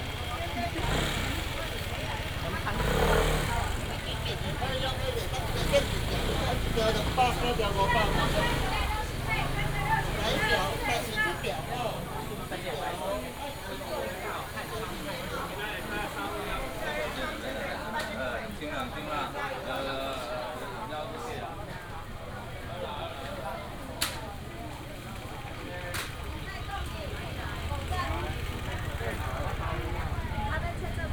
Walking through the traditional market, traffic sound